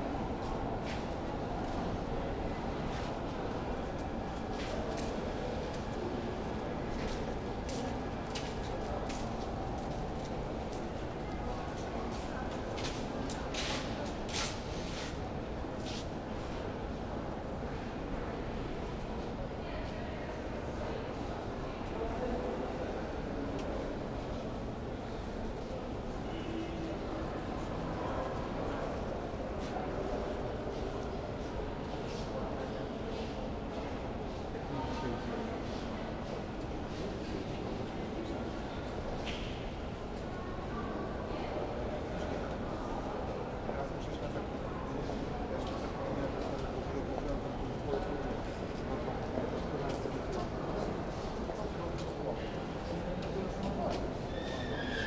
waiting for my train and traveling one stop. (sony d50)
7 November, 16:23